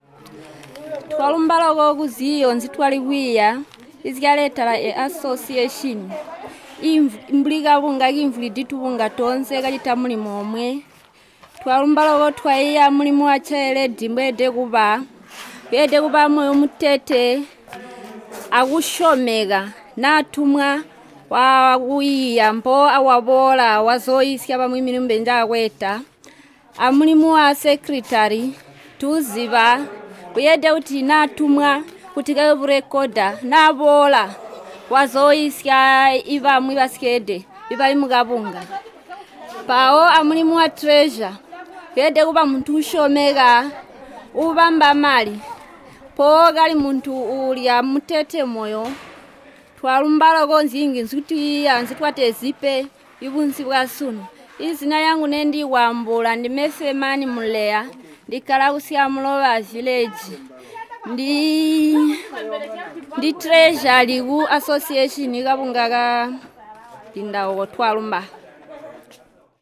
Sebungwe River Mouth, Binga, Zimbabwe - This is an important day for women in fishing...
Fenani Muleya, treasurer, also adds on the importance of the new Association for the women.